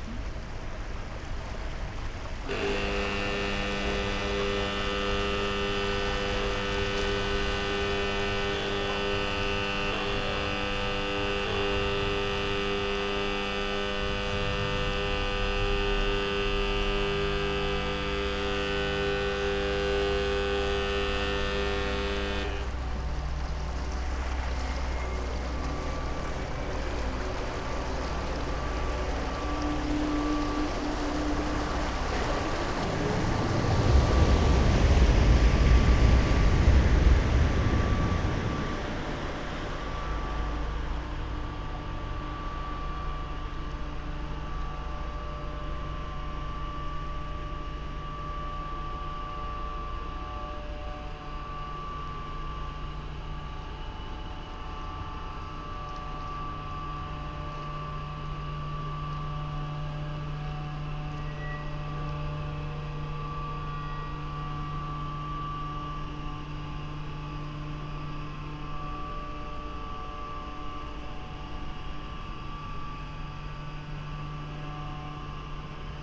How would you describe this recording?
train bridge over the river Hunte. The bridge has a special construction to open for ships to pass through (german: Rollklappbrücke). Sound of ships, a warn signal, bridge swinging back to it's normal position, cyclists and pedestrians crossing. (Sony PCM D50, Primo EM172)